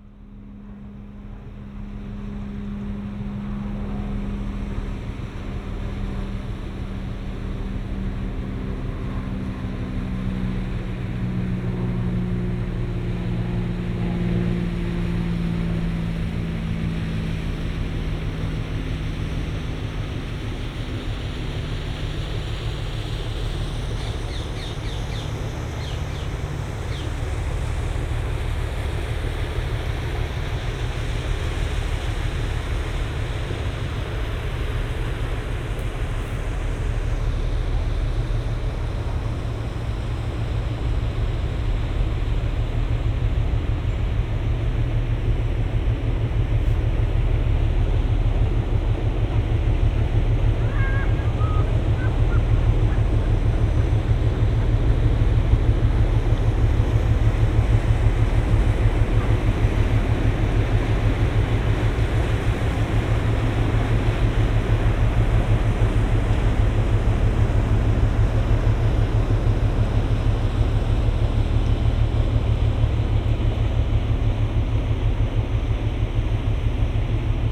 Rheinpromenade, Mannheim, Deutschland - Frachtschiff rheinaufwärts
Fluss Rhein, Wind, Wasser, Wellen, Frachtschiff Wolfgang Krieger, Vögel, urbaner Hintergrund
Baden-Württemberg, Deutschland, 30 May 2022, 18:16